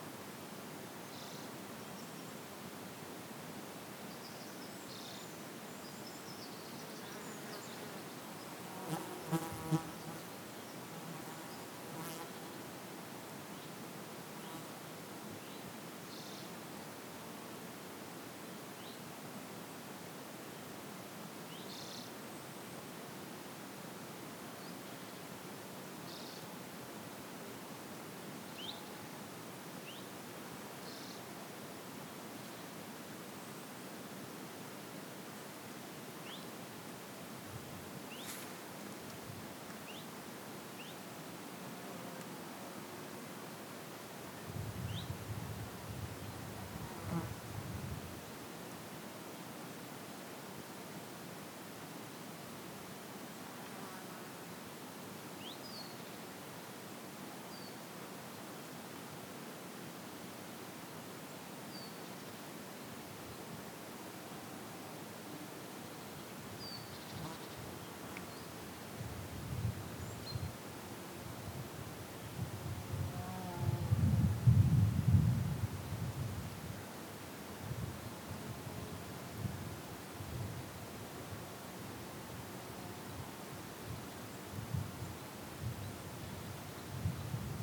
An Sanctóir, Ballydehob, Co. Cork, Ireland - World Listening Day 2020 - a sound collage from Ballydehob
A short soundwalk in the secluded nature reserve around the An Sanctóir Holistic Community Centre in the heart of West Cork. Take your ears for a walk. Walk and listen. Listen again. Live. Enjoy!